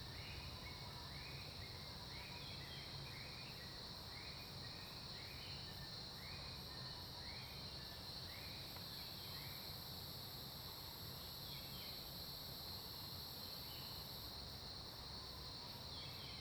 TaoMi Li., 綠屋民宿桃米里 - In the parking lot
Early morning, Bird calls, Aircraft flying through, Cicadas sound
Zoom H2n MS+XY
Nantou County, Taiwan, 10 June 2015, 06:00